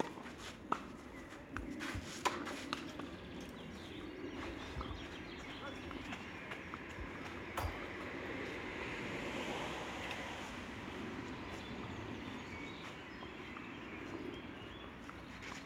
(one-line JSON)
{"title": "Zürich, Mythenquai, Schweiz - Tennisplatz, Sand", "date": "2004-06-20 11:35:00", "description": "Spiel, Linienflugzeug, Strassenbahn, Zug.", "latitude": "47.35", "longitude": "8.53", "altitude": "409", "timezone": "Europe/Zurich"}